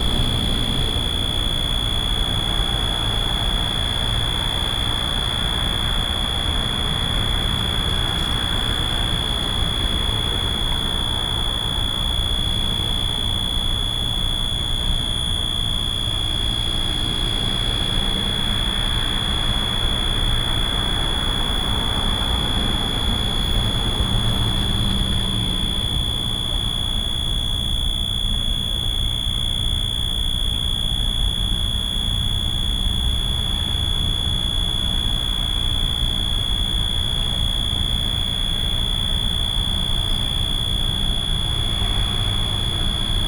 USA, Virginia, Washington DC, Electric power transformer, Buzz, Road traffic, Binaural